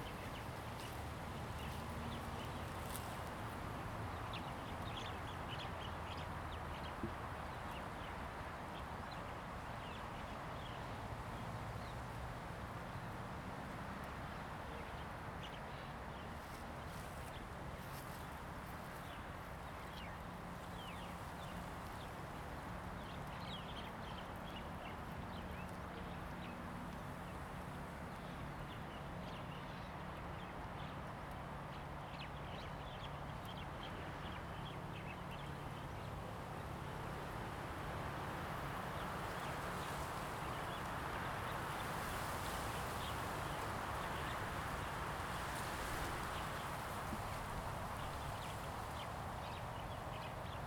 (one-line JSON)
{"title": "Jinning Township, Kinmen County - Birds singing and Wind", "date": "2014-11-03 08:01:00", "description": "Birds singing, Wind, Distance came the sound of music garbage truck\nZoom H2n MS+XY", "latitude": "24.47", "longitude": "118.30", "altitude": "7", "timezone": "Asia/Taipei"}